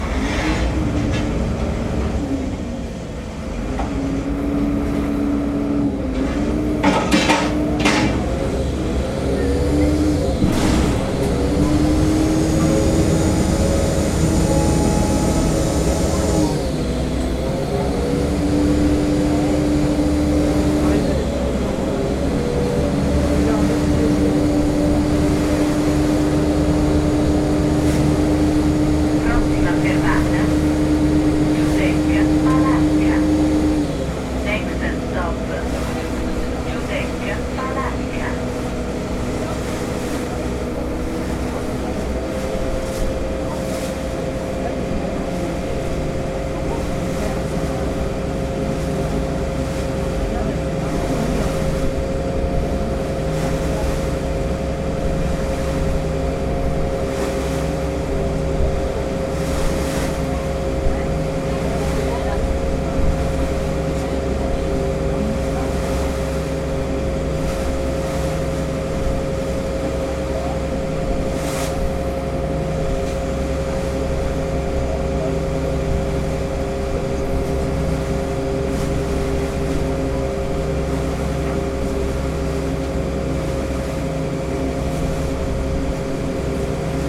Giudecca, Venezia - linea 2 redentore->palanca
venezia, linea 2 redentore->palanca
Venezia, Italy, 24 October